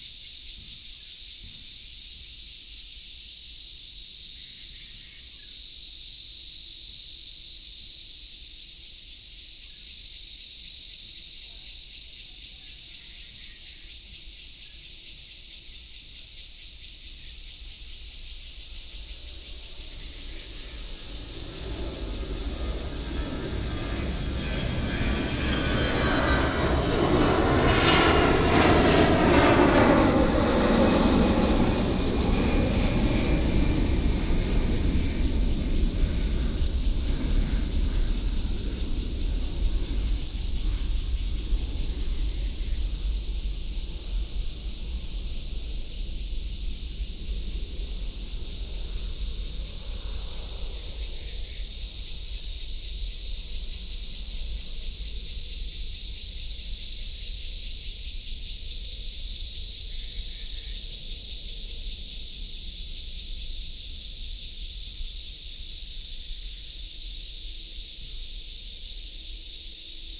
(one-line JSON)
{"title": "陳康國小, Dayuan Dist., Taoyuan City - Next to primary school", "date": "2017-07-23 18:26:00", "description": "Next to primary school, birds sound, take off, Cicada", "latitude": "25.06", "longitude": "121.23", "altitude": "35", "timezone": "Asia/Taipei"}